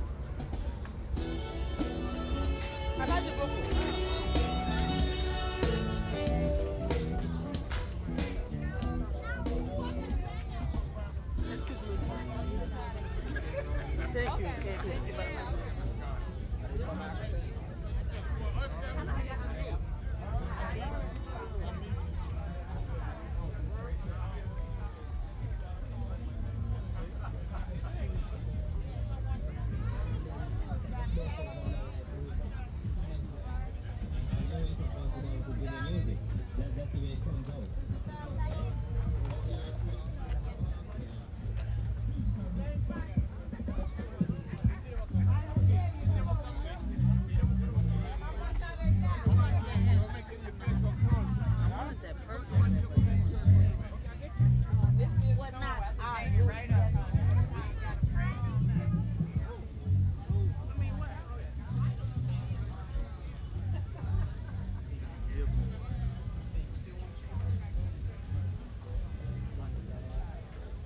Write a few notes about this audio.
Recorded on Zoom H2 with in ear binaural mics, This is recording took place at the African Festival of the Arts on Labor Day Weekend 2012